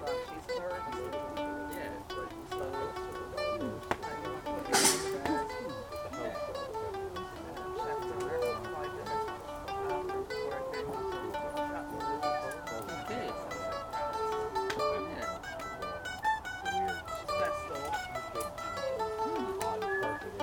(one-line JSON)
{"title": "Music by the Fire, The Octagon, The Glen of the Downs Nature Reserve, County Wicklow, Ireland - Aidan and Kathleen jamming by the fire", "date": "2017-07-29 22:55:00", "description": "This is the sound of Kathleen and Aidan jamming by the fireside at our Glen of the Downs Road Protest 20 Years Reunion.", "latitude": "53.14", "longitude": "-6.12", "altitude": "205", "timezone": "Europe/Dublin"}